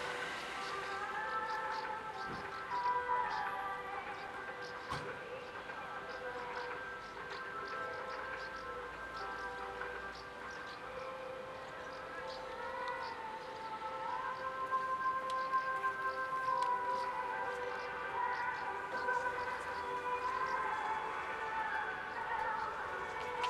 L'Aquila, Centro comm. L'Aquilone - 2017-06-08 03-L'Aquilone